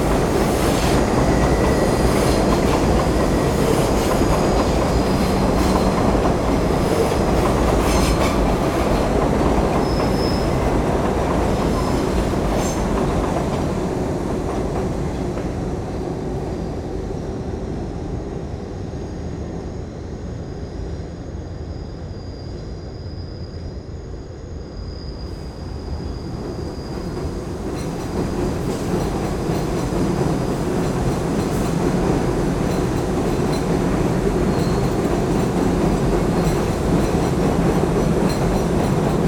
Trains and pigeons in Queens, New York.
NY, USA, 7 September 2010